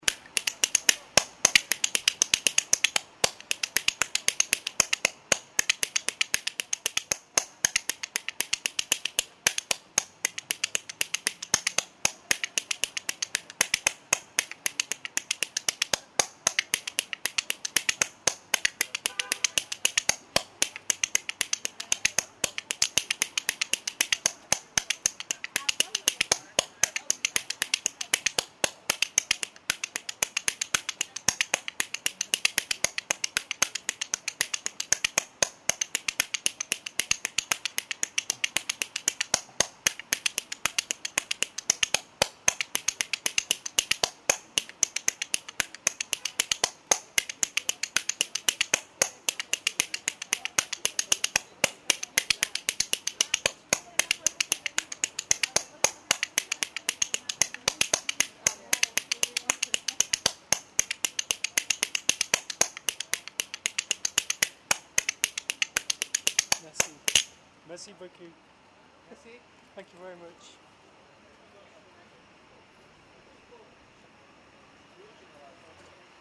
Montreal: Rue St. Catherine - Rue St. Catherine
equipment used: Nagra Ares MII
Spoon Player busking in the street